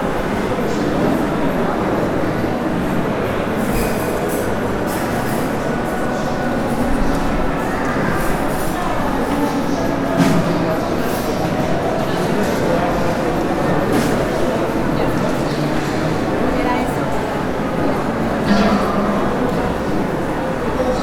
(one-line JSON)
{"title": "Juan Nepomuceno, Villa del Juncal, León, Gto., Mexico - Caminando por el interior del centro comercial Plaza Mkdito.", "date": "2021-11-01 14:06:00", "description": "Walking inside the Plaza Mkdito shopping center.\nThere are several shops of all kinds and places to eat.\nI made this recording on November 1st, 2021, at 2:06 p.m.\nI used a Tascam DR-05X with its built-in microphones.\nOriginal Recording:\nType: Stereo\nCaminando por el interior del centro comercial Plaza Mkdito.\nHay varias tiendas de todo tipo y lugares para comer.\nEsta grabación la hice el 1 de noviembre de 2021 a las 14:06 horas.\nUsé un Tascam DR-05X con sus micrófonos incorporados.", "latitude": "21.15", "longitude": "-101.69", "altitude": "1822", "timezone": "America/Mexico_City"}